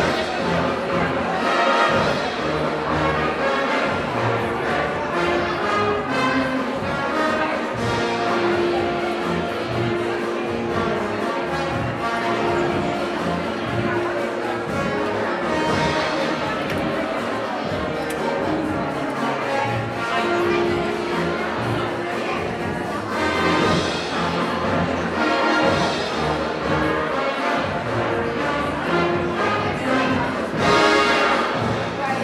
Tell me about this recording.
80th anniversary of local library. big band playing, audience and ambience